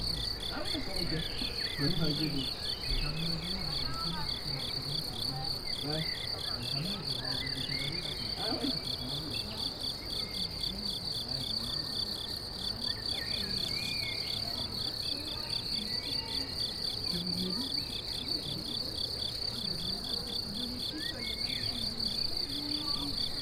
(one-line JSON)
{"title": "Chemin des Tigneux, Chindrieux, France - grillons et chevaux", "date": "2022-04-17 16:20:00", "description": "Près d'une prairie les grillons s'en donne à coeur joie, rencontre de propriétaires de chevaux .", "latitude": "45.82", "longitude": "5.85", "altitude": "327", "timezone": "Europe/Paris"}